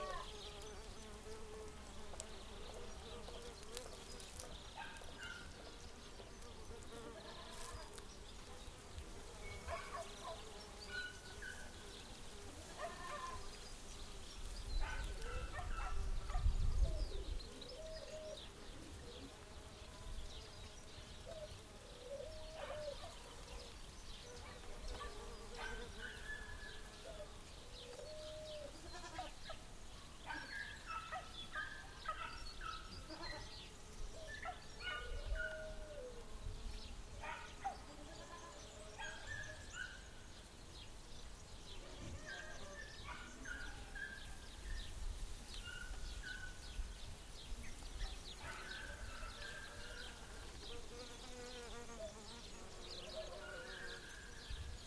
the dog, the sheep and the other little friends